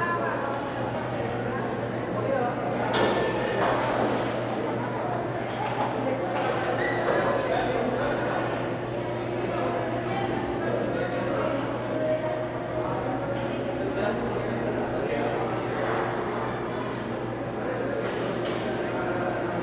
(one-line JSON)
{"title": "C., Centro, Maxcanú, Yuc., Mexique - Maxcanú - Marché couvert", "date": "2021-10-21 10:45:00", "description": "Maxcanú - Mexique\nAmbiance sonore à l'intérieur du marché couvert", "latitude": "20.59", "longitude": "-90.00", "altitude": "12", "timezone": "America/Merida"}